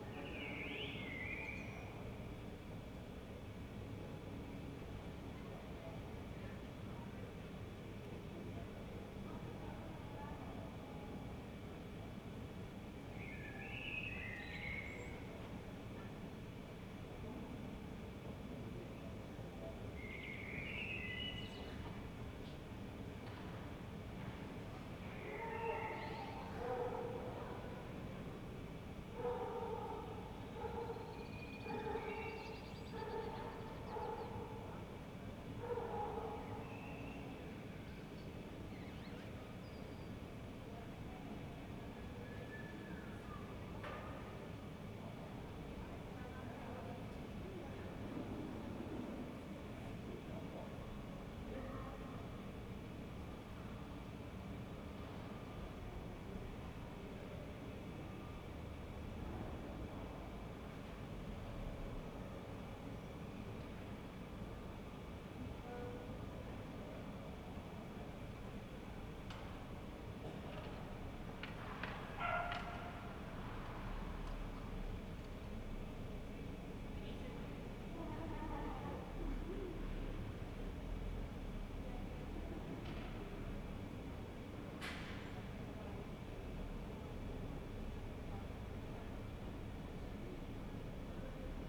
"Terrace_at_sunset_wth string quartet in background in the time of COVID19" Soundscape
Chapter XXXVI of Ascolto il tuo cuore, città. I listen to your heart, city
Tuesday April 7th 2020. Fixed position on an internal terrace at San Salvario district Turin, three weeks after emergency disposition due to the epidemic of COVID19.
Start at 7:46 p.m. end at 8:21 p.m. duration of recording 34'43'', sunset time at 8:04.